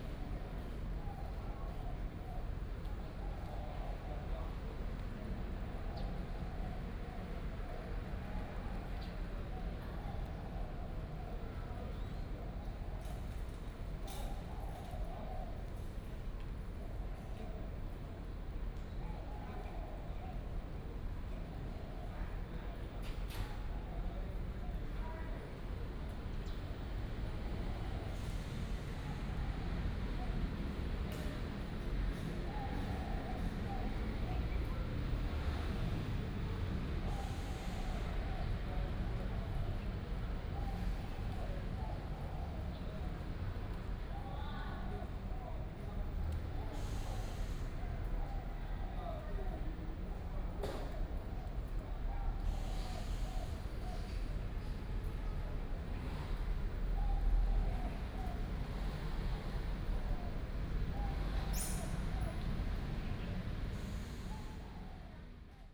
Park after the rain, Traffic Sound, Birds